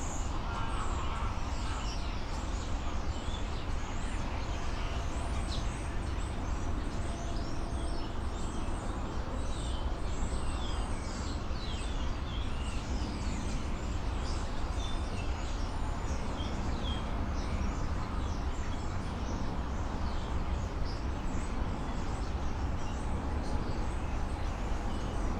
Moorlinse, Berlin-Buch, Deutschland - starlings (Sturnus vulgaris), remote traffic
many starlings (Sturnus vulgaris) chatting in the dense reed at Moorlinse pond, Berlin Buch. Intense drone from the nearby Autobahn ring
(Sony PCM D50, Primo EM272)
Berlin, Germany, 30 September 2021, ~19:00